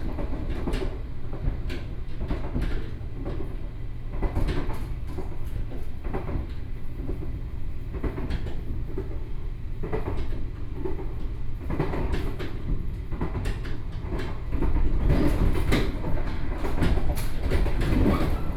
Western Line, Taiwan - Tze-Chiang Train
Zhongli Station to Taoyuan Station, Zoom H4n+ Soundman OKM II